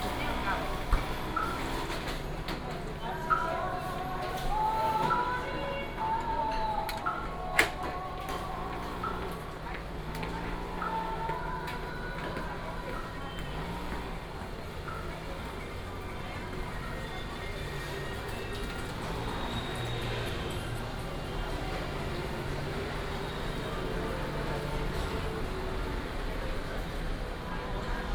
臺中公有東光市場, Beitun Dist., Taichung City - walking through the market
Walking through the market, Buddhist monk